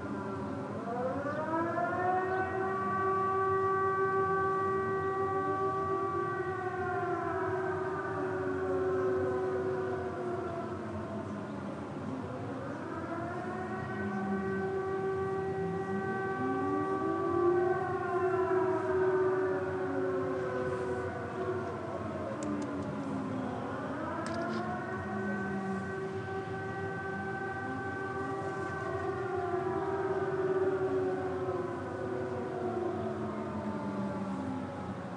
sirenes testing
every wednesday of each month, at 12, all the sirenes of the city are sounding for a test